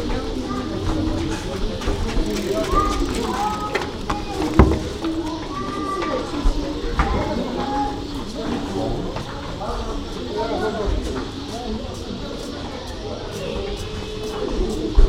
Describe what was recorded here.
Africa, Marocco, Essaouira, street